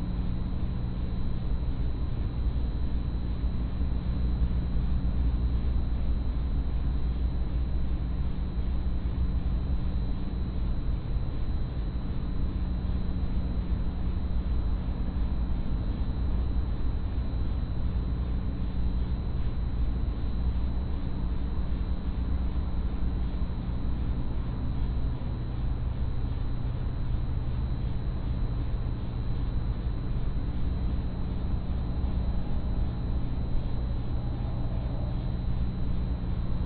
묵호 등대 밑에 under the Mukho lighthouse
묵호 등대 밑에_under the Mukho lighthouse...idyll sinister...